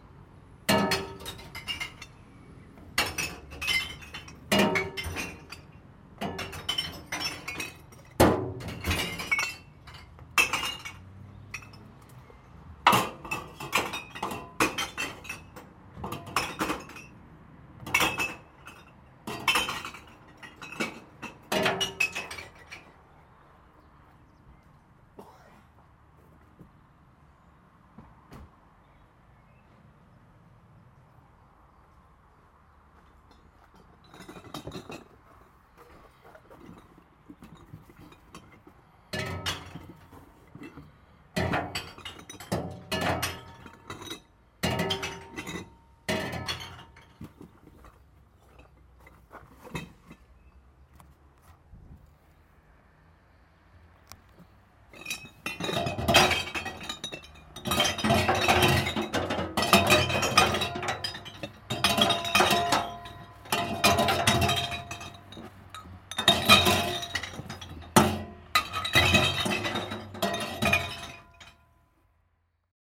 Ruppichteroth, glass recycling container
recorded july 1st, 2008.
project: "hasenbrot - a private sound diary"